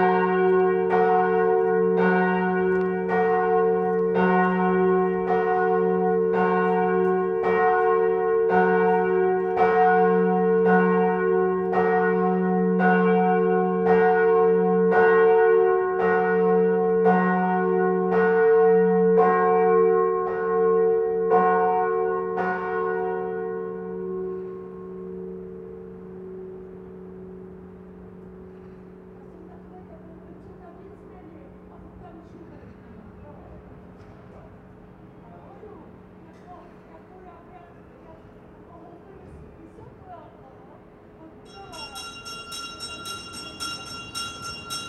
Church Kostel sc. Vojtecha, Prague - Church Bells at noon in Prague
Bells from the church at 12:00 on Sunday, recorded from the street.
Light wind sometimes.
Church Kostel sc. Vojtecha, Prague 1
Recorded by an ORTF setup Schoeps CCM4 x 2 on a Cinela Suspension + Windscreen
Sound Devices mixpre6 recorder
GPS: 50.078476 / 14.415440
Sound Ref: CZ-190303-002
Recorded during a residency at Agosto Foundation in March 2019